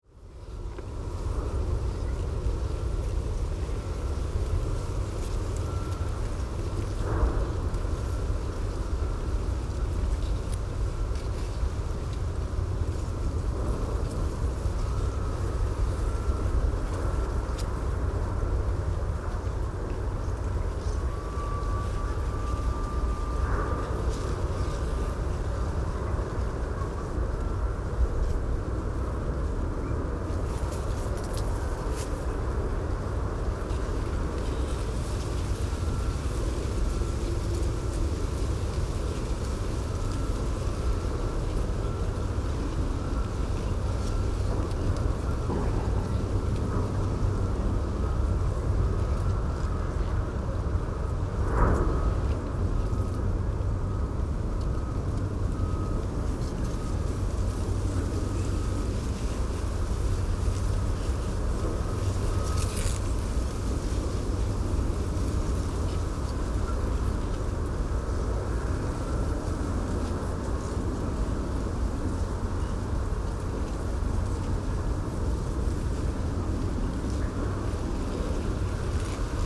Staten Island
wind in reeds, container terminal in background